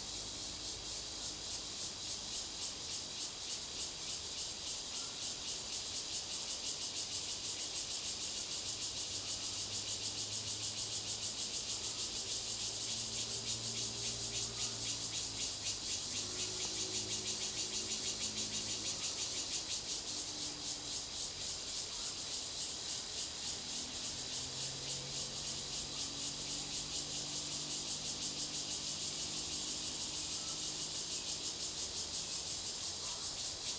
TaoMi Village, Nantou County - Cicadas cry
Birds singing, Cicadas cry, Frog calls
Zoom H2n MS+XY